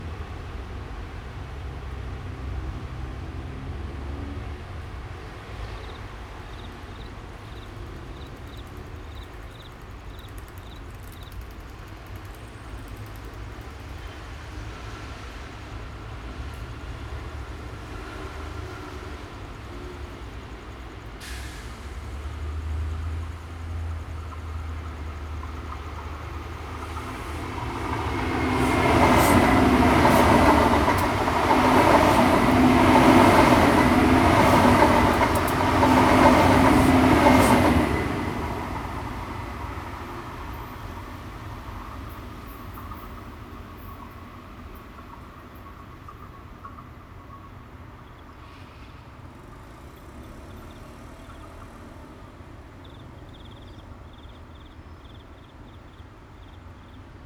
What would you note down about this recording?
Insect sounds, Traffic Sound, MRT trains through, Bicycle sound, Zoom H2n MS+XY +Spatial Audio